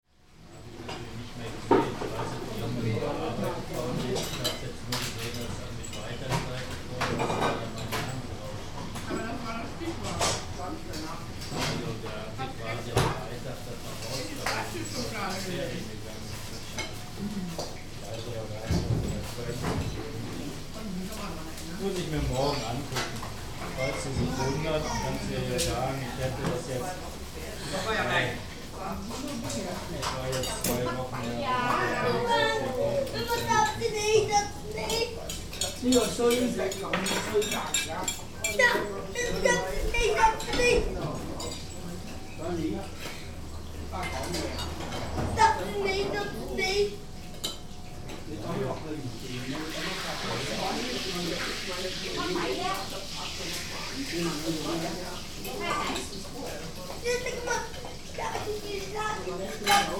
köln, maastrichter str., king wah - chicken canton style, hassle
22.04.2009 19:45 chicken kanton style. the owner argues with his son.
22 April 2009, 19:45